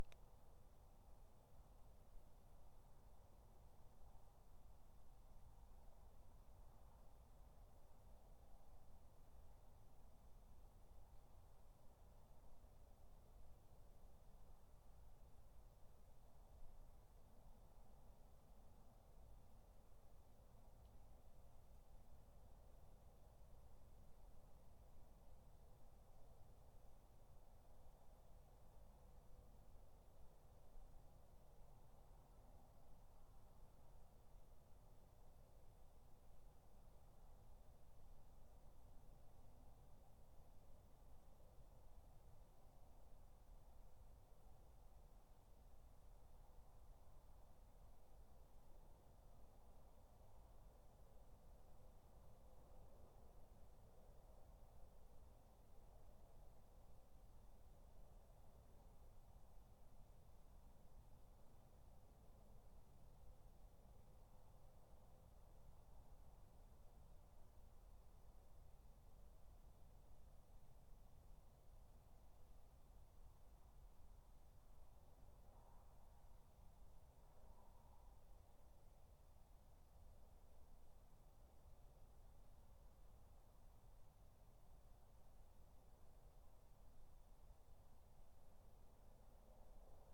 Dorridge, West Midlands, UK - Garden 2
3 minute recording of my back garden recorded on a Yamaha Pocketrak